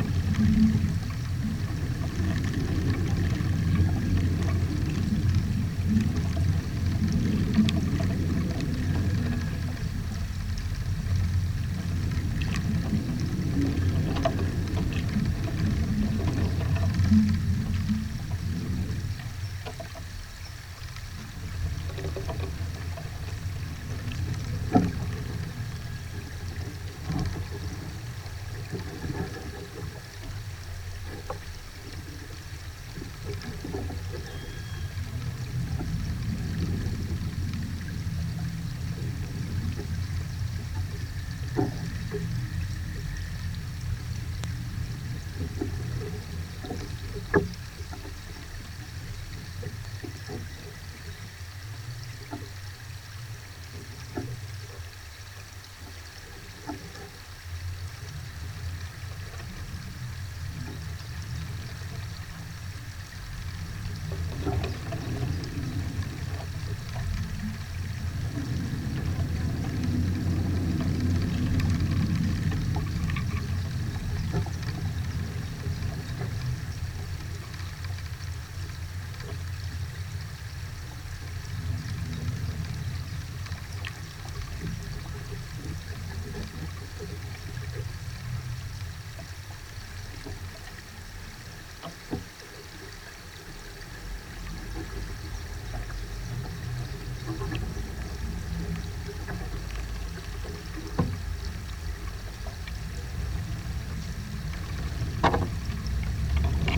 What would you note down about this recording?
2 contact microphones attached to branches of 2 tall spruces. The trees stand beside a creek 100 meters away from the railway line Göttingen-Kassel. At 6:50 there is a short local train and at 8:00 there is a cargo train passing.